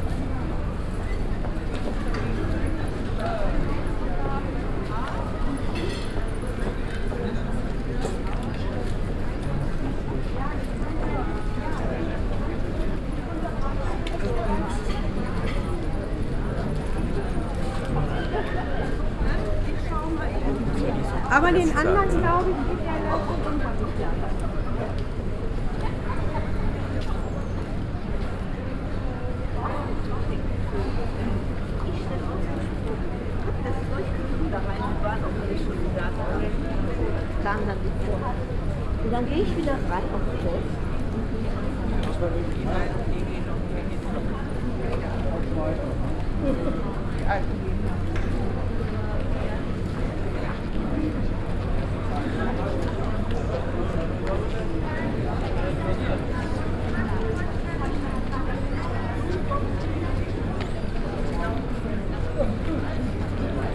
essen, kettwiger street, passengers
Gehen in der Einkaufszone. Schritte und Bemerkungen von Fussgängern an einem frühen Nachmittag.
Walking in the shopping zone.
Projekt - Stadtklang//: Hörorte - topographic field recordings and social ambiences
Essen, Germany, 9 June 2011